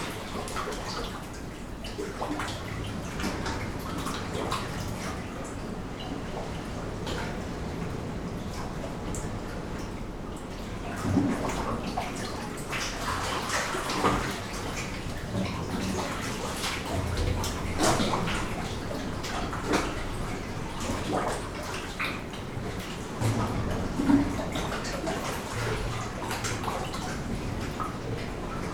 Found this little blow hole in the sea cave systems near the shipwreck of Edro III. There were two fissures to "post" microphones through. This selection is a short segment extracted from a 75 minute recording. I suspended 2 Brady (Primo capsule) mics to within 50 cm of the water surface recording to Olympus LS11. I was entranced by the immediate and immersive experience. The resonance and reverberation within the cave, the subtle and gentle rhythms and splashings with the pedal note of the waves breaking just along the coast. A beautiful location, we sunbathed (17C!) and enjoyed a shimmering, calm sea in that inexplicable winter sunlight.